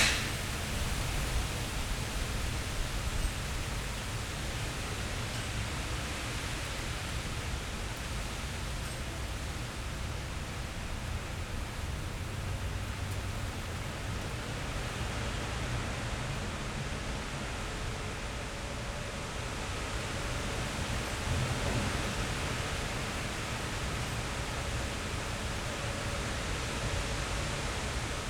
a storm arrives (Sony PCM D50, DPA4060)
Berlin, Germany, 5 October 2017